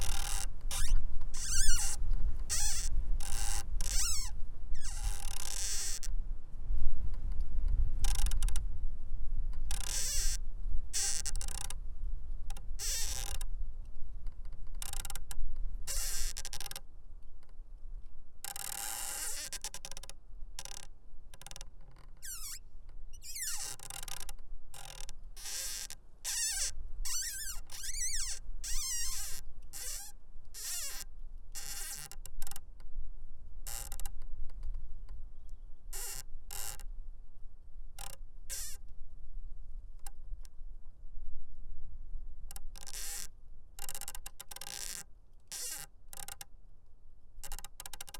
Tree rubs against a wooden fence in the wind at the Research Station.
East of England, England, United Kingdom, 2 April 2022, ~14:00